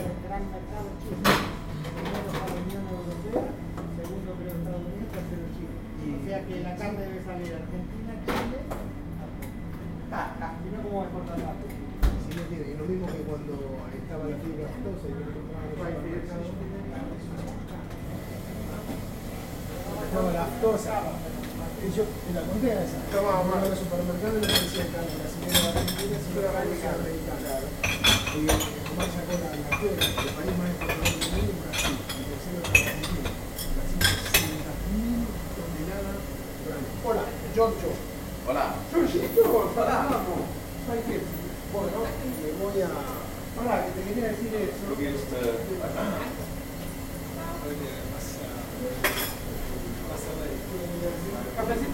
neighbourhood café, south cologne, may 30, 2008. - project: "hasenbrot - a private sound diary"